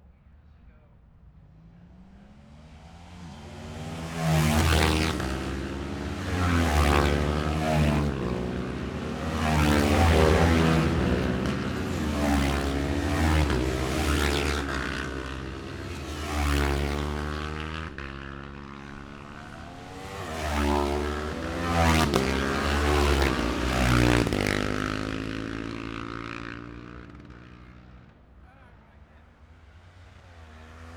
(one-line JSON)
{"title": "Jacksons Ln, Scarborough, UK - gold cup 2022 ... twins ...", "date": "2022-09-16 10:58:00", "description": "the steve henson gold cup 2022 ... twins practice ... dpa 4060s on t-bar on tripod to zoom f6 ...", "latitude": "54.27", "longitude": "-0.41", "altitude": "144", "timezone": "Europe/London"}